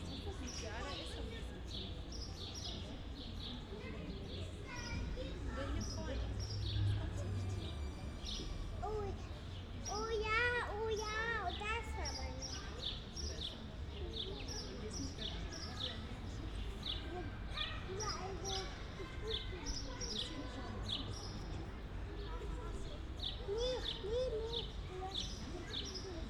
Schinkestr., Neukölln, Berlin - playground, sunday afternoon
playground between Schinkestr. and Maybachufer, within a quite typical berlin backyard (Hinterhof) landscape, warm spring sunday afternoon.
(tech: SD702 Audio technica BP4025)